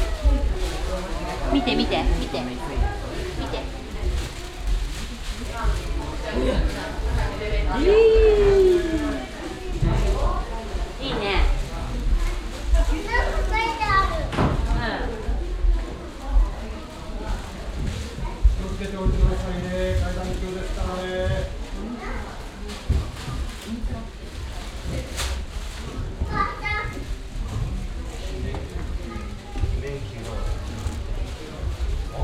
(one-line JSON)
{"title": "masumoto - inside castle - masumoto - inside castle 02", "date": "2010-07-26 09:28:00", "description": "atmosphere inside the wooden castle. peoples bare feet on the wooden ground and the sound of plastic bags where they carry their shoes while talking\ninternational city scapes - social ambiences", "latitude": "36.24", "longitude": "137.97", "altitude": "597", "timezone": "Asia/Tokyo"}